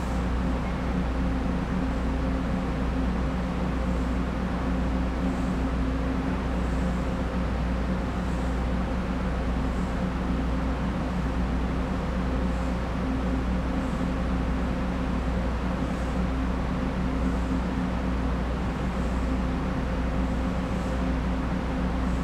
{
  "title": "Sandiaoling Station, Ruifang District - In the station platform",
  "date": "2011-11-21 16:28:00",
  "description": "In the station platform, Zoom H4n+ Rode NT4",
  "latitude": "25.07",
  "longitude": "121.82",
  "altitude": "133",
  "timezone": "Asia/Taipei"
}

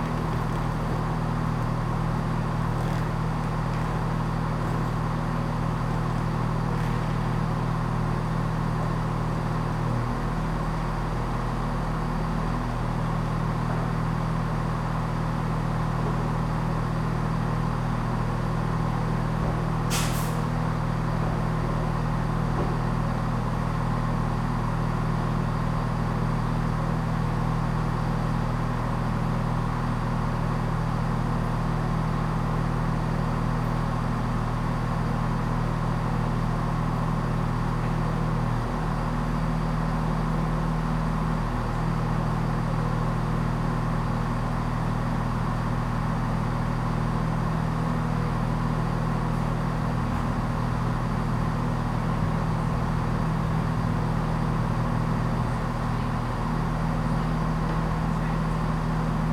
{
  "title": "Ely, Station Rd, Cambridgeshire, Ely, UK - Diesel train stopped and departing",
  "date": "2017-03-12 20:56:00",
  "description": "Diesel train stopped in train station and departing. Sounds from arriving travellers and public announcement\nTrain diesel arrêté en gare, puis re-démarrant. Annonce et bruits de voyageurs arrivant en gare.",
  "latitude": "52.39",
  "longitude": "0.27",
  "altitude": "6",
  "timezone": "GMT+1"
}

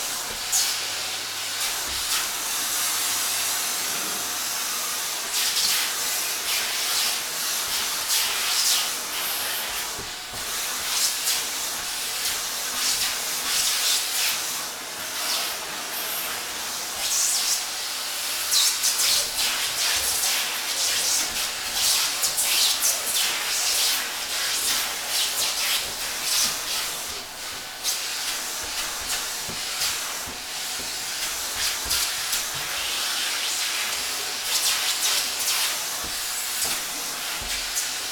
{
  "title": "Poznan, Mateckiego street, living room - sofa and vacuum cleaner",
  "date": "2012-08-14 13:07:00",
  "description": "vacuuming the sofa, the nozzle makes great swishing, space sounds.",
  "latitude": "52.46",
  "longitude": "16.90",
  "altitude": "97",
  "timezone": "Europe/Warsaw"
}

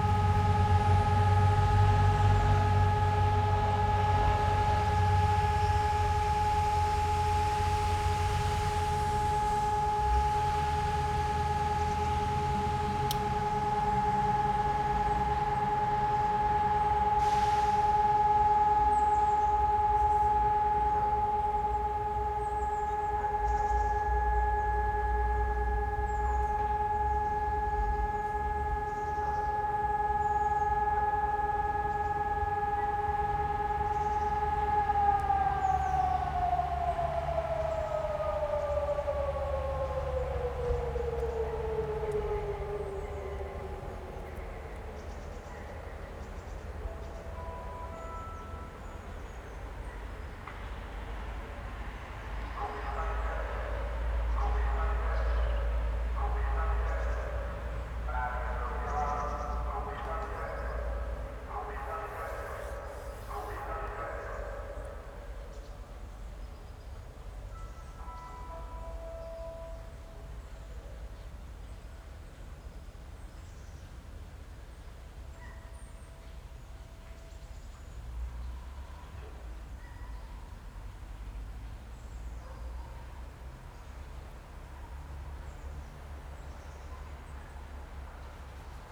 {
  "title": "Testing the sirens, Údolní, Praha, Czechia - Testing the sirens",
  "date": "2022-09-07 11:56:00",
  "description": "On the first Wednesday of every month the sirens from cold war times are still tested in Prague. Depending on where one is single or multiple sirens can be heard. They are preceeded by an announcement that the test will happen (not recorded) and ended by an announcement all is finished.",
  "latitude": "50.03",
  "longitude": "14.41",
  "altitude": "239",
  "timezone": "Europe/Prague"
}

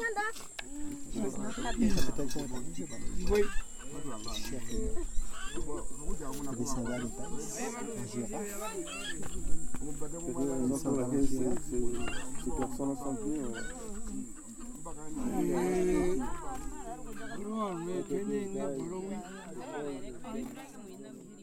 {
  "title": "Kunene, Namibia a village close to Opuwo. - HIMBA village",
  "date": "2013-10-16 12:35:00",
  "description": "Young Himba woman singing, and Himba guide introducing us to the people.",
  "latitude": "-18.12",
  "longitude": "13.76",
  "altitude": "1228",
  "timezone": "Africa/Windhoek"
}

